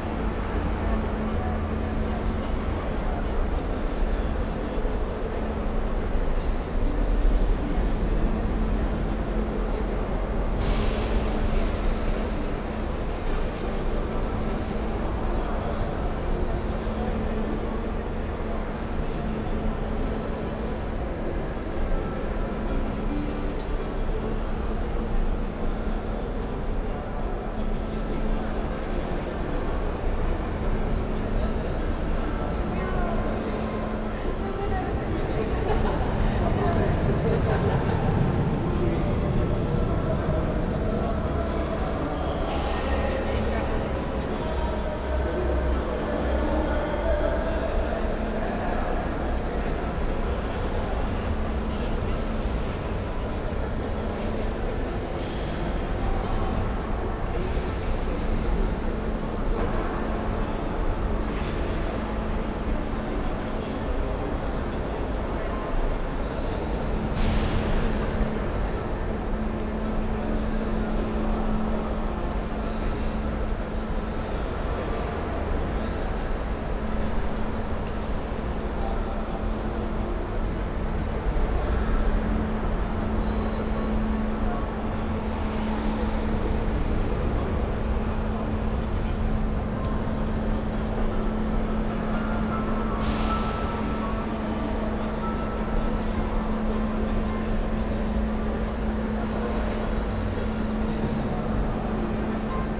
Praha, hlavní nádraží, old station hall - ambience at old station hall
cafe, entrance area of historic station, ambience